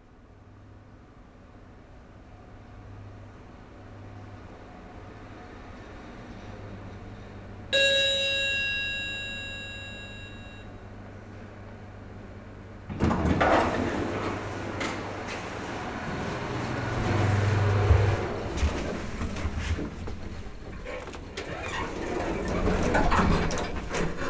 Yerevan, Arménie - Using the lift
Using the very old lifts of the Nairy 74 building. One is not working.